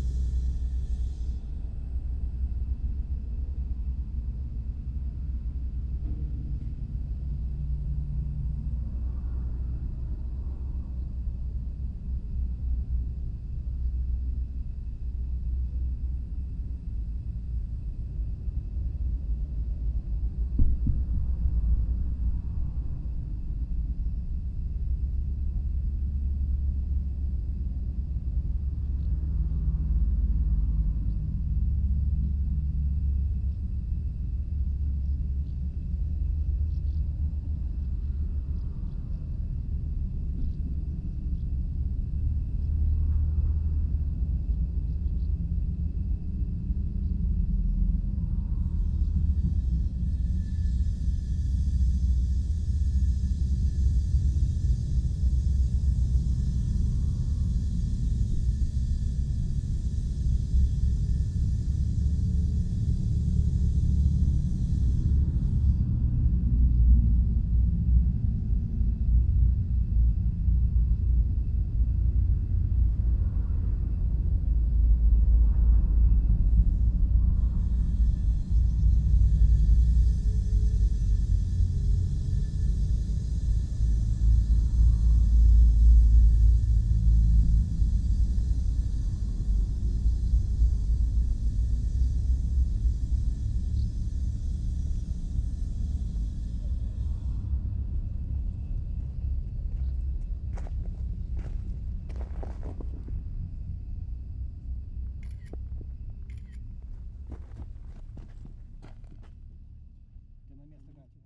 there is new pipe for water under the street. I have placed a pair of omnis in it